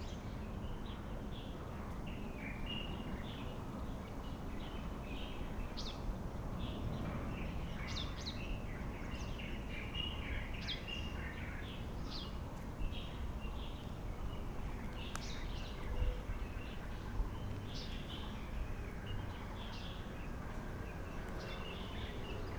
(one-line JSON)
{"title": "Ln., Longxing 3rd St., Bali Dist., New Taipei City - Birds sound", "date": "2012-04-09 06:01:00", "description": "Birds singing, Traffic Sound\nBinaural recordings, Sony PCM D50 +Soundman OKM II", "latitude": "25.13", "longitude": "121.45", "altitude": "37", "timezone": "Asia/Taipei"}